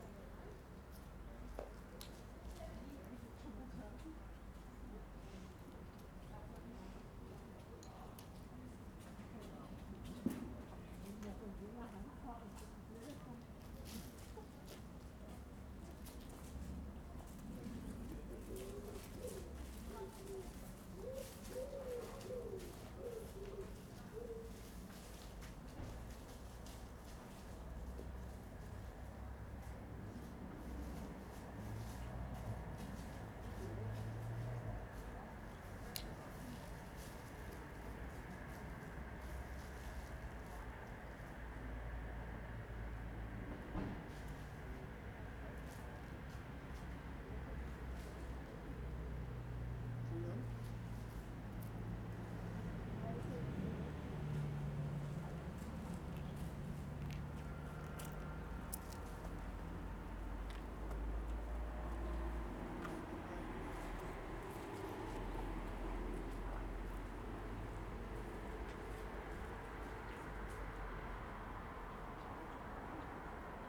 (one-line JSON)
{"title": "Festspielhügel, Bayreuth, Germania - “Hommage a RW and JC in the time of COVID19: soundscape”", "date": "2020-07-20 14:25:00", "description": "“Hommage to RW and JC in the time of COVID19: soundscape”\nOn Saturday, July 25th, the 2020 Bayreuth Festival with the singing masters of Nuremberg was supposed to open.\nBecause of the Corona virus epidemic the festival will not take place.\nOn Monday, July 20 I passed in front of the Festpielhaus in the early afternoon and I made a 4 channel surround recording of 4'33\" of sounds by placing the recorder on the central step of the main entrance door, obviously closed.\nIn non-pandemic conditions, it would still not have been the full fervency of the festival, but certainly, the situation would have been less quiet and, I suppose, you could have heard the sounds of the final days of rehearsal and preparations filtered out of the Festspielhause.\nStart at 2:25 p.m. end at 2:30 p.m. duration of recording 4’33”", "latitude": "49.96", "longitude": "11.58", "altitude": "369", "timezone": "Europe/Berlin"}